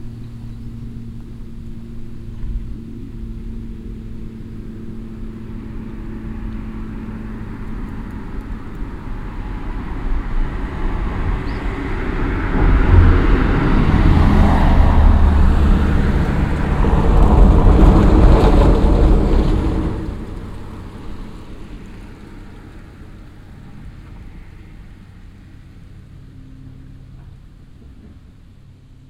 roder, church, bells
The sound of the noon church bells recorded from across the street on a windy late summer day. Some cars passing by on the stoney road.
Roder, Kirche, Glocken
Das Geräusch von der Mittagsglocke der Kirche, aufgenommen von der Straße gegenüber an einem windigen, spätsommerlichen Tag. Einige Autos fahren auf der steinigen Straße vorbei.
Roder, église, cloches
Le son du carillon de midi à l’église enregistré depuis l’autre côté de la rue, un jour venteux à la fin de l’été Dans le fond, on entend des voitures roulant sur la route en pierre.
Luxembourg, 17 September 2011